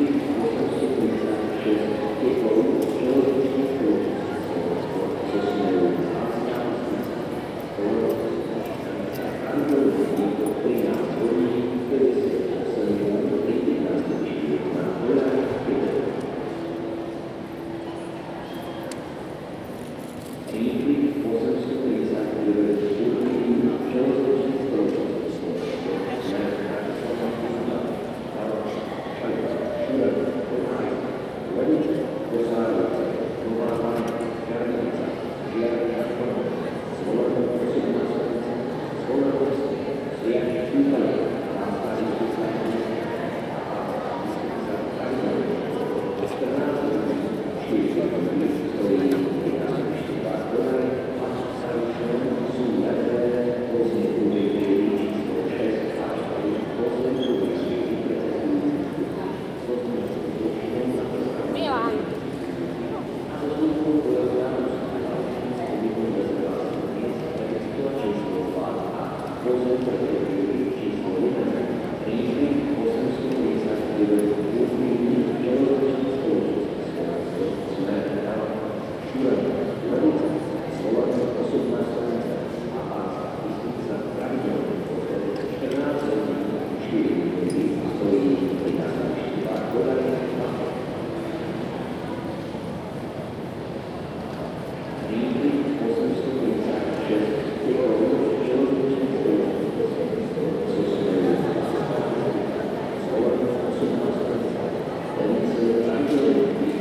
Bratislava hl.st., Námestie Franza Liszta, Bratislava-Staré Mesto, Slovakia - Hráč na fujaru na Hlavné stanici a hlášení spojů
Na cestě z Budapešti při přestup v Bratislavě.